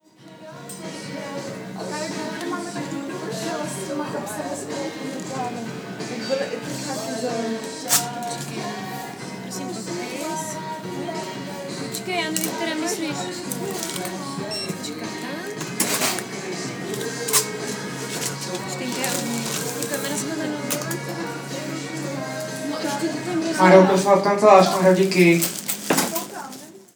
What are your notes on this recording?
praha, shopping mall, h&m, closing hour